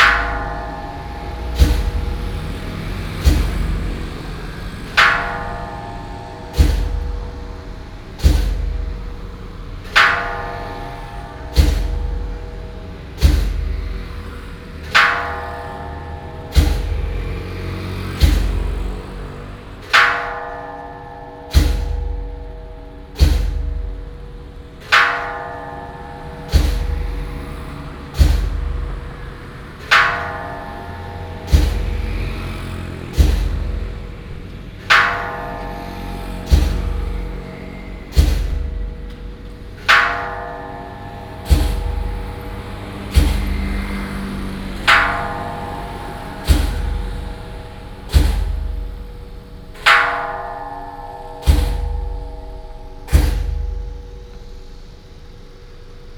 聖福宮, Zhongli Dist. - Bells and drums
In the square of the temple, Bells and drums, traffic sound